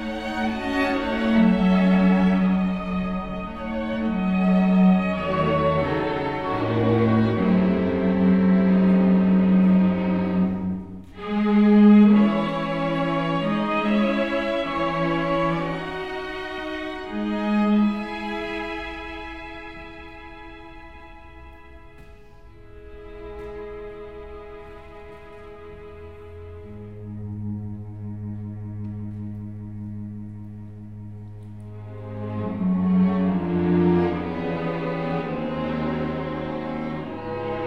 String quartet Dominant from Moscow